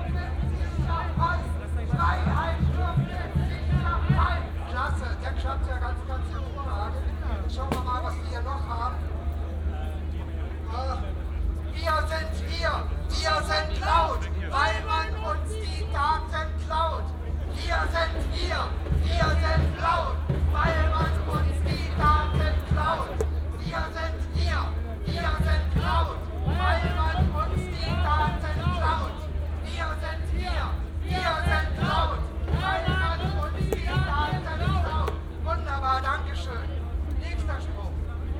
Spandauer str. / Alex - einstimmen zur demo
11.10.2008 14:20 probe